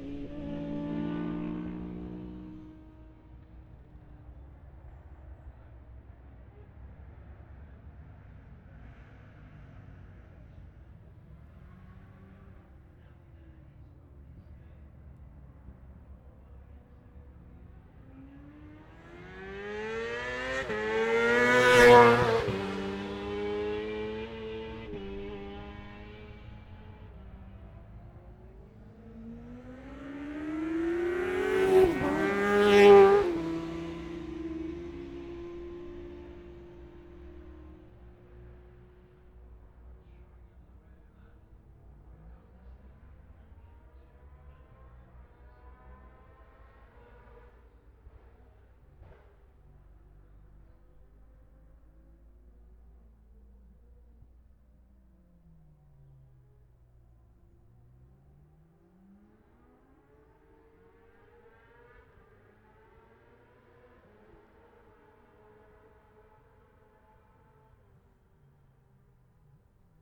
Jacksons Ln, Scarborough, UK - olivers mount road racing ... 2021 ...
bob smith spring cup ... newcomers ... luhd pm-01 mics to zoom h5 ...